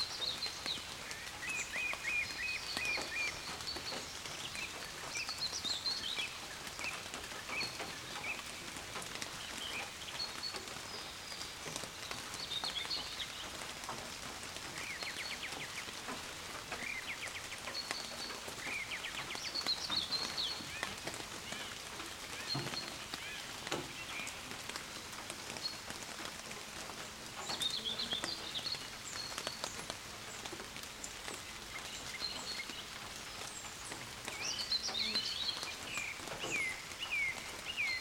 Palupõhja village, Estonia - Early morning rainshower in a garden
3. June 2009, 6 AM. Common Whitethroat, Pied Flycatcher, Song Thrush
Tartu maakond, Eesti, 3 June 2009